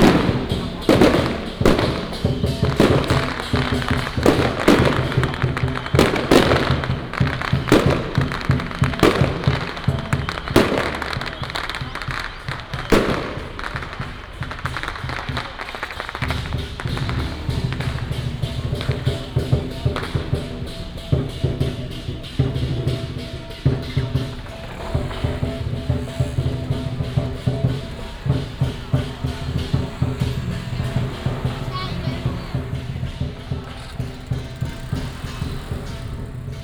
Fireworks and firecrackers, Traffic sound, Baishatun Matsu Pilgrimage Procession